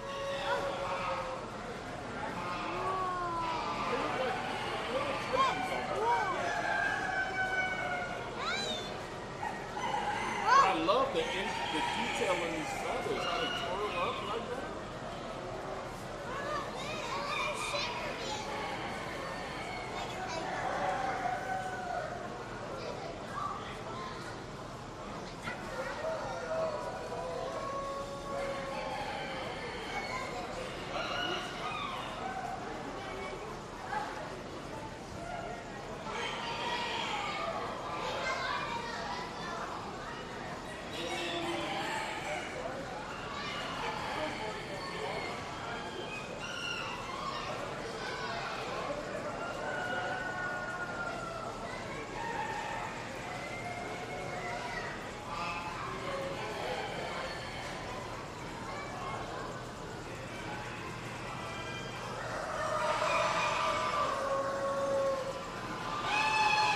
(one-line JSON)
{"title": "Kansas State Fairgrounds, E 20th Ave, Hutchinson, KS, USA - Northeast Corner, Poultry Building", "date": "2017-09-09 16:08:00", "description": "A man and children admire fantail and frillback pigeons. Other poultry are heard in the background. Stereo mics (Audiotalaia-Primo ECM 172), recorded via Olympus LS-10.", "latitude": "38.08", "longitude": "-97.93", "altitude": "469", "timezone": "America/Chicago"}